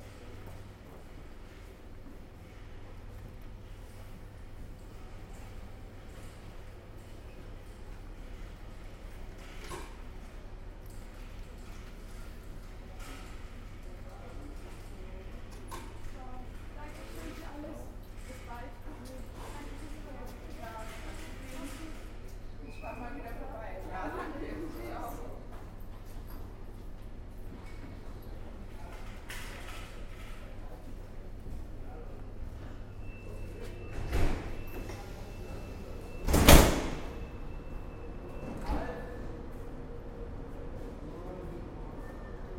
Rosenheim, main station, entrance hall
recorded june 7, 2008. - project: "hasenbrot - a private sound diary"